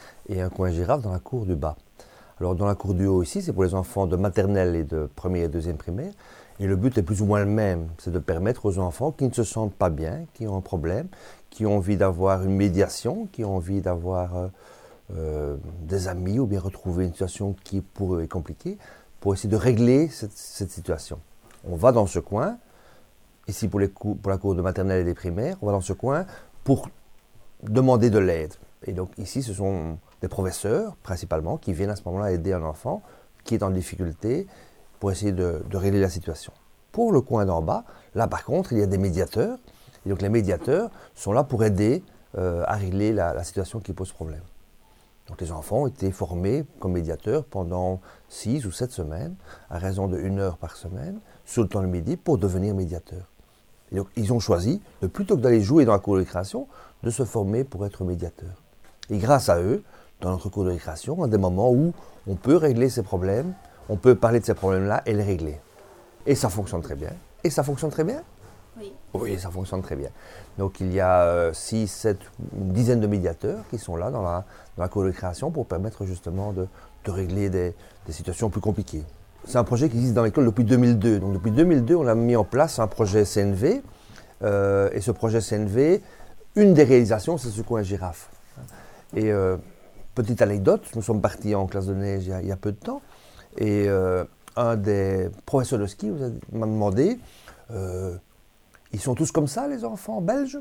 Court-St.-Étienne, Belgique - The school director
The school director is talking about his school to children and he explains what is Nonviolent Communication. This moment is recorded by children (6-8 years).
25 February 2015, ~17:00